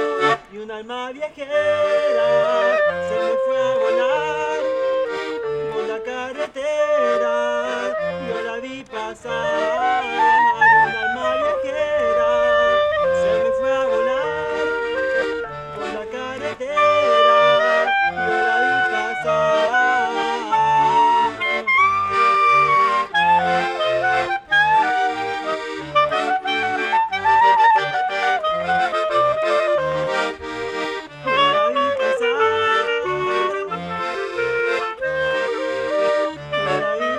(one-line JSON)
{"title": "Plaza el Descanso, Valparaíso, Chile - musicians playing", "date": "2015-11-26 15:00:00", "description": "Afternoon at Plaza el Descanso, musicians sing and play accordion and clarinet\n(SD702, Audio Technica BP4025)", "latitude": "-33.04", "longitude": "-71.63", "altitude": "51", "timezone": "America/Santiago"}